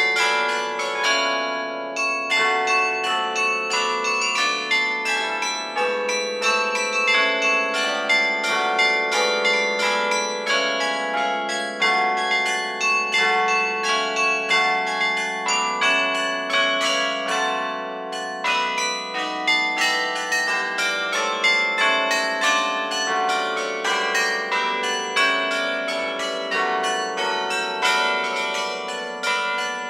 Dunkerque (département du Nord)
Carillon - beffroi du Dunkerque
Maître carillonneur : Monsieur Alfred Lesecq
France métropolitaine, France, 27 June